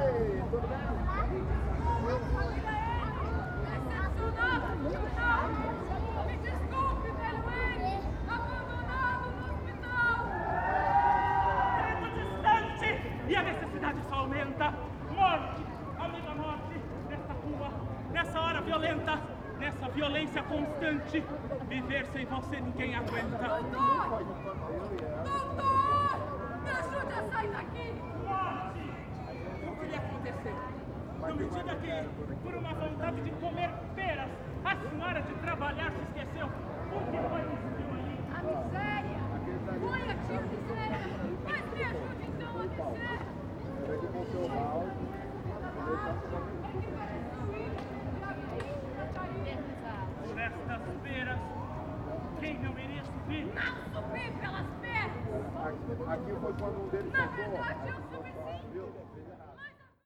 Calçadão de Londrina: Apresentação teatral: Praça Willie Davids - Apresentação teatral: Praça Willie Davids / Theatrical presentation: Willie Davids Square
Panorama sonoro: grupo apresentava uma peça teatral, em um sábado no início da tarde, como parte da programação da ação comercial “Londrina Liquida”. As falas dos atores e as músicas cantadas por eles não tinham auxílio de aparelhos amplificadores e, mesmo assim, destacavam-se dentre os sons do local. Ao entorno do grupo, muitas pessoas, dente elas crianças, acompanhavam a apresentação.
Sound panorama: group performed a theatrical play, on a Saturday in the afternoon, as part of the commercial action program "Londrina Liquida". The speeches of the actors and the songs sung by them did not have the aid of amplifying apparatuses and, nevertheless, they stood out among the sounds of the place. Around the group, many people, including children, followed the presentation.
Londrina - PR, Brazil, July 2017